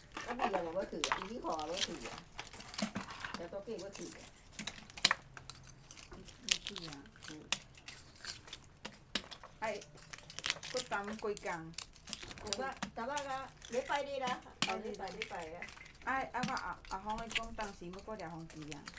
芳苑鄉芳中村, Changhua County - digging oysters
A group of old women are digging oysters
Zoom H6 MS